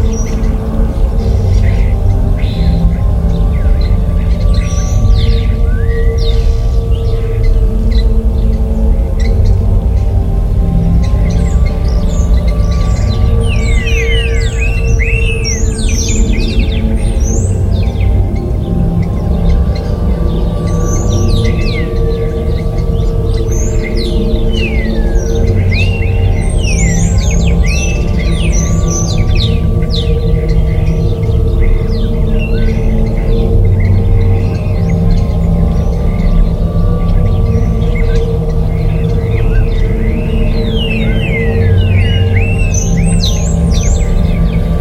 Recorded with a pair of DPA 4060s into a Marantz PMD661
Tarragona, Spain, 23 October